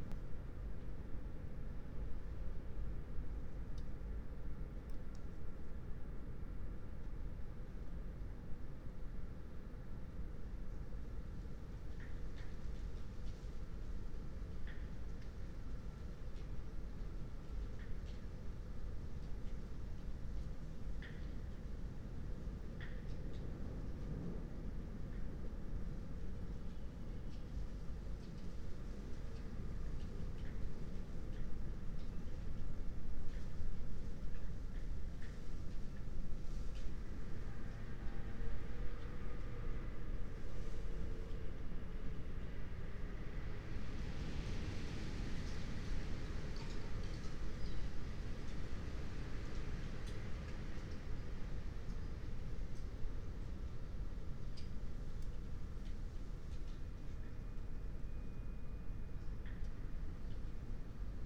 22:12 Berlin Bürknerstr., backyard window - Hinterhof / backyard ambience
Berlin, Germany, 19 January 2022, 22:12